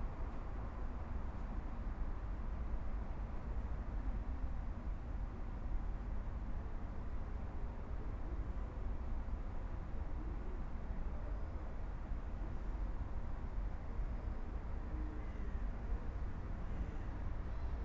The Genval station. Someone is phoning just near me and a few time after the train arrives. Passengers board inside the train ; it's going to Brussels. A few time after, a second train arrives. It's not stopping in the station.